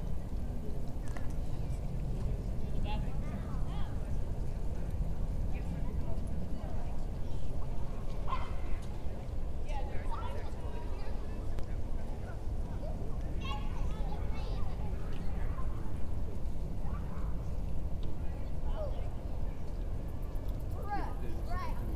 Westside Park, Atlanta, GA, USA - Parking Lot
Parking lot ambience captured at Westside Park. The park was relatively busy today. Children and adults can be heard from multiple directions. Many other sounds can be heard throughout, including traffic, trains, car doors slamming, people walking dogs, etc. Insects are also heard on each side of the recording setup. The recorder and microphones were placed on top of the car.
[Tascam DR-100mkiii & Primo EM272 omni mics]